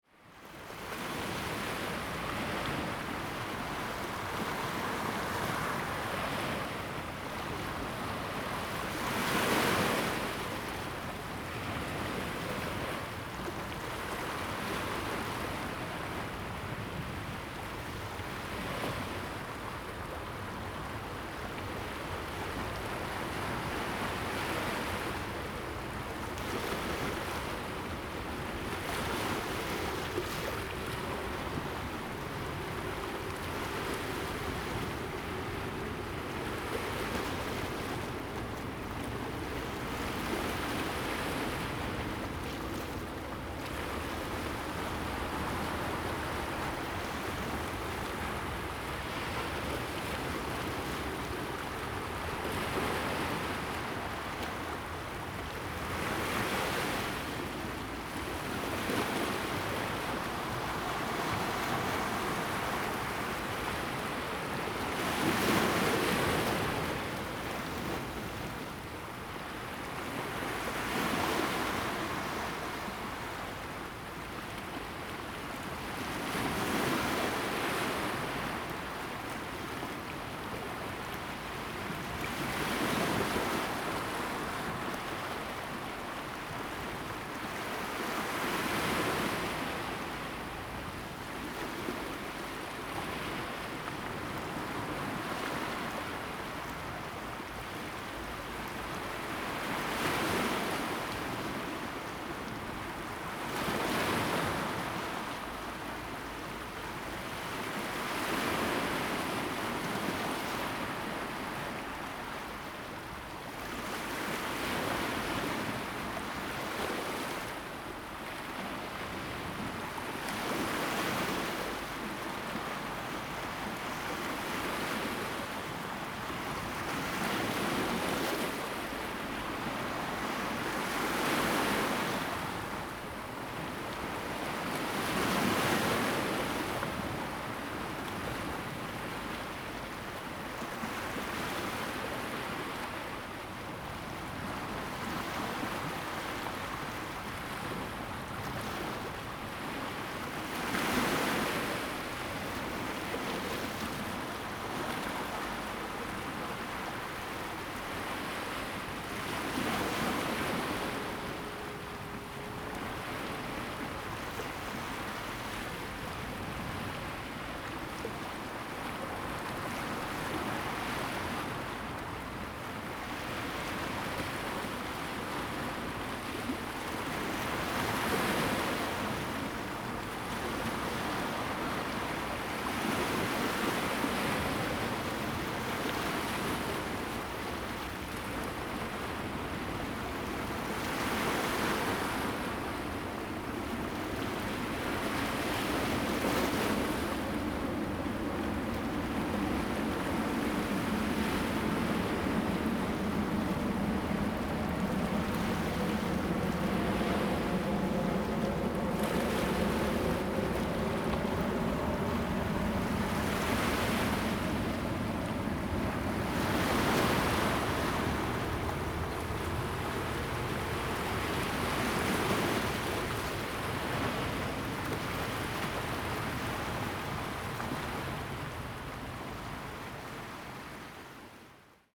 坡頭村, Xinfeng Township - Waves
at the seaside, Waves, High tide time
Zoom H2n MS+XY
Hsinchu County, Taiwan, 21 September 2017, 10:46